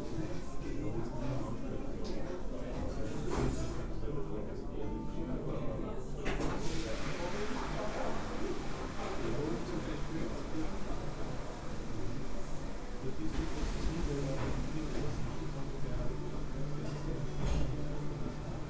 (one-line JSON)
{"title": "Alt-Urfahr, Linz, Österreich - golden pub", "date": "2015-01-02 20:52:00", "description": "golden pub, linz-urfahr", "latitude": "48.31", "longitude": "14.28", "altitude": "270", "timezone": "Europe/Vienna"}